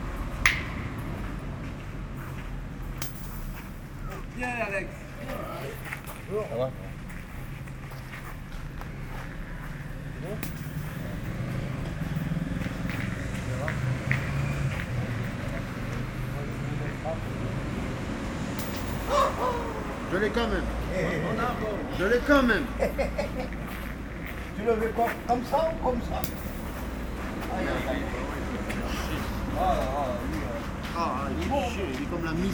Tours, France - Bowling in a park
On a small park, a very noisy group plays bowling. To say the least, they are very happy, it's a communicative way of life ! It looks like this group of friends play every sunday like that.